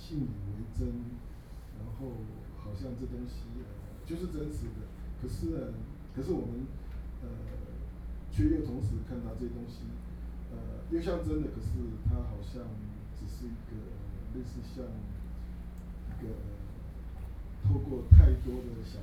Nanhai Gallery - Young artists forum
Young artists forum, With curator and art critic and teacher Talk, Sony Pcm d50+ Soundman OKM II
台北市 (Taipei City), 中華民國, 21 July 2013, 17:29